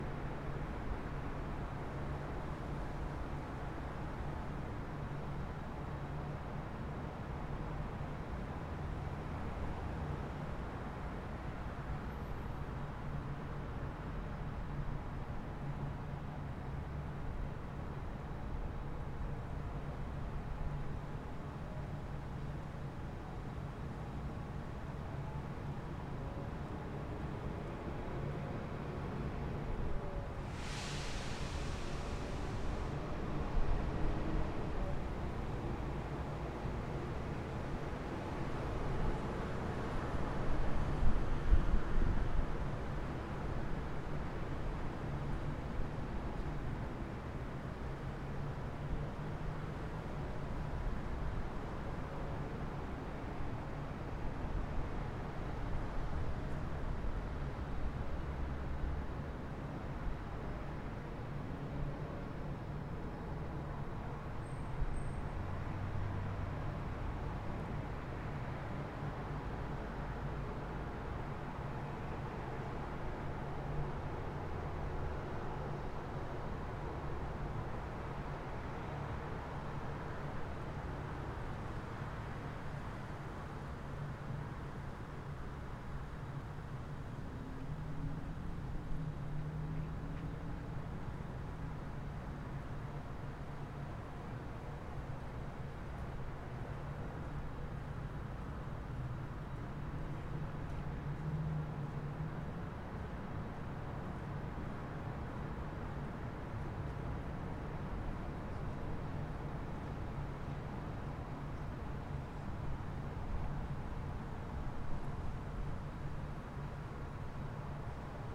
A recording from within Michael Heizer's monumental earthwork 'Levitated Mass' at LACMA.